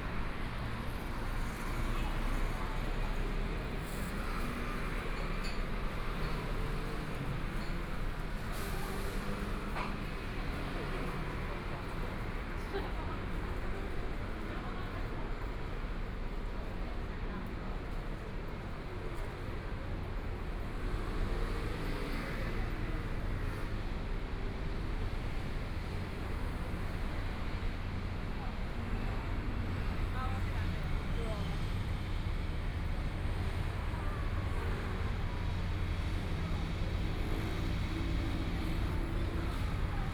{"title": "Minquan W. Rd., Taipei City - walking on the Road", "date": "2014-02-10 15:10:00", "description": "walking on the Road, Traffic Sound, Motorcycle Sound, Pedestrians, Binaural recordings, Zoom H4n+ Soundman OKM II", "latitude": "25.06", "longitude": "121.52", "timezone": "Asia/Taipei"}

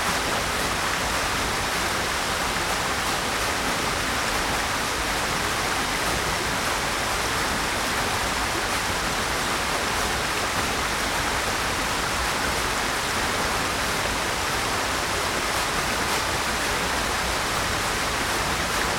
{"title": "Gorenja Trebuša, Slap ob Idrijci, Slovenija - Mkcova ravine - third waterfall", "date": "2020-01-18 11:34:00", "description": "The third of fifteen waterfalls in Mkcova ravine. Zoom H5 with LOM Uši Pro microphones.", "latitude": "46.04", "longitude": "13.83", "altitude": "319", "timezone": "Europe/Ljubljana"}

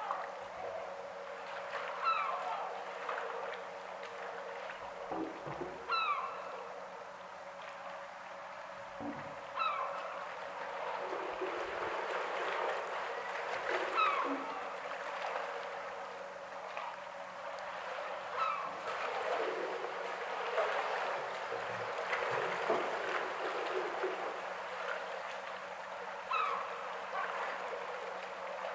{"title": "Stamsund, Norway", "date": "2009-04-01 09:39:00", "description": "Recording from the fishing harbour of Stamsund.", "latitude": "68.12", "longitude": "13.84", "timezone": "Europe/Oslo"}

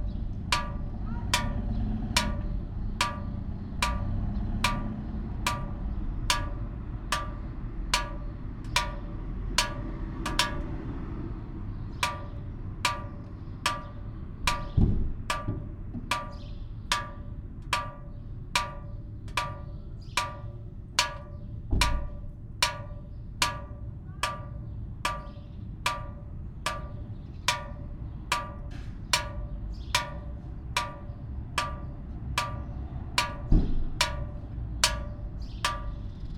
{"title": "rain gutter, tyrševa - water drops on sunday", "date": "2014-05-18 11:31:00", "latitude": "46.56", "longitude": "15.65", "altitude": "283", "timezone": "Europe/Ljubljana"}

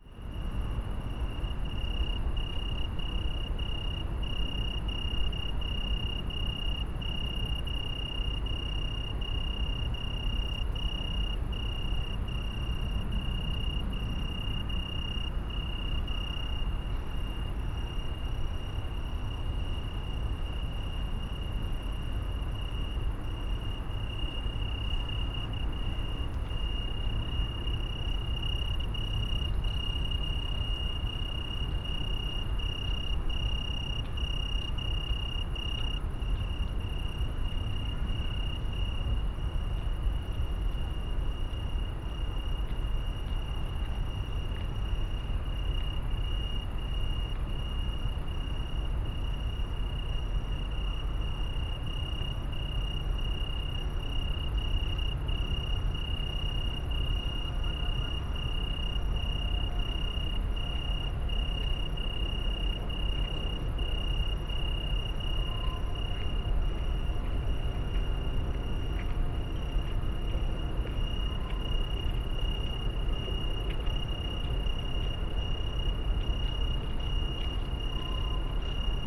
{"title": "Mediapark, Köln - song of Oecanthus pellucens (Weinhähnchen)", "date": "2016-08-18 22:10:00", "description": "different angle, almost binaural, trains passing\n(Sony PCM D50, Primo EM172)", "latitude": "50.95", "longitude": "6.94", "altitude": "51", "timezone": "Europe/Berlin"}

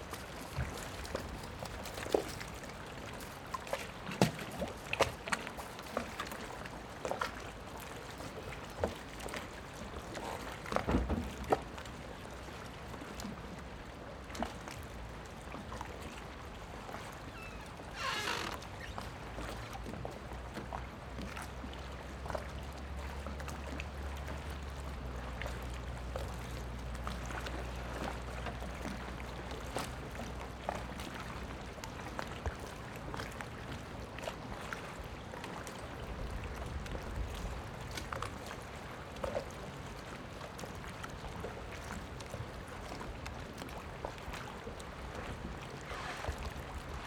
{"title": "赤馬漁港, Xiyu Township - In the dock", "date": "2014-10-22 14:37:00", "description": "In the dock, Waves and tides\nZoom H6 +Rode NT4", "latitude": "23.58", "longitude": "119.51", "altitude": "8", "timezone": "Asia/Taipei"}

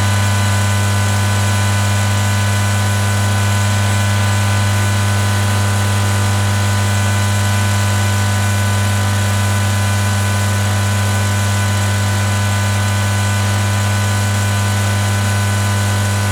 {"title": "Sakalų g., Ringaudai, Lithuania - Small electrical substation noise", "date": "2020-03-21 10:00:00", "description": "Close up recording of a humming electrical substation transformer box. Recorded with ZOOM H5.", "latitude": "54.88", "longitude": "23.82", "altitude": "76", "timezone": "Europe/Vilnius"}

